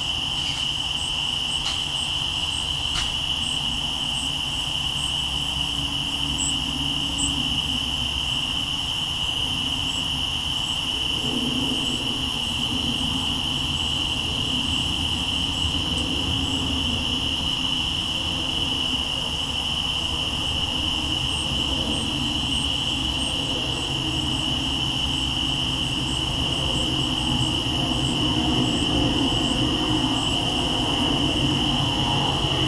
East Austin, Austin, TX, USA - Post-Leper River Blue Moon
Recorded onto a Marantz PMD661 with a pair of DPA 4060s.